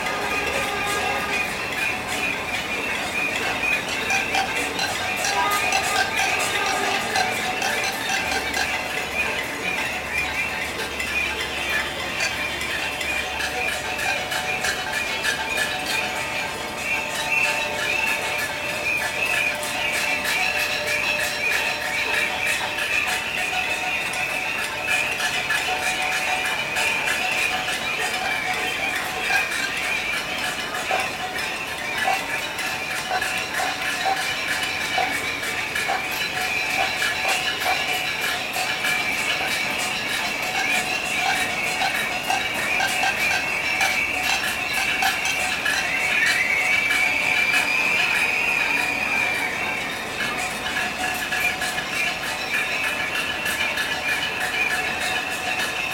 Subida Artillería, Valparaíso, Chile - Cacerolazo
grabado en valparaíso chile